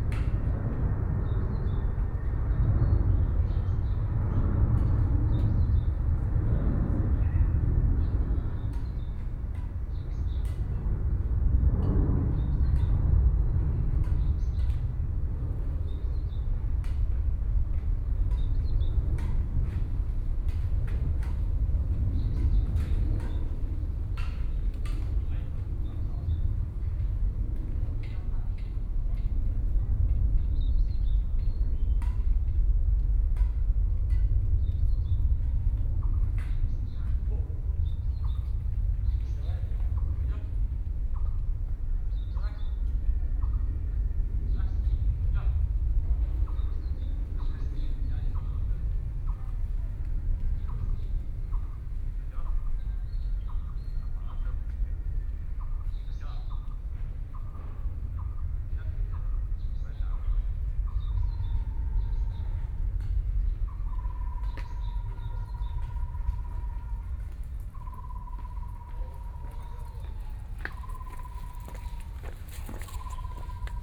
BiHu Park, Taipei City - Sitting in the park
Sitting in the park, Construction noise, Birdsong, Insects sound, Aircraft flying through
Binaural recordings